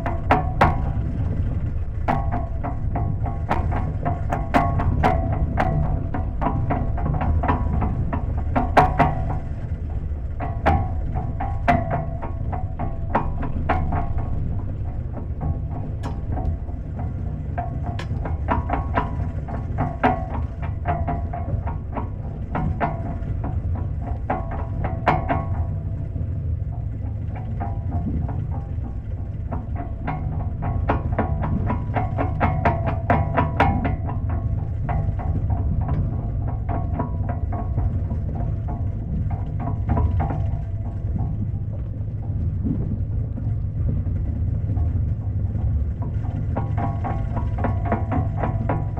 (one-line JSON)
{"title": "Upper Bay - The Inner Ferry", "date": "2018-06-03 15:30:00", "description": "Contact mic recording (Cortado MkII ).\nSounds of Staten Island Ferry's engine, some wind and metal sounds.", "latitude": "40.69", "longitude": "-74.04", "timezone": "America/New_York"}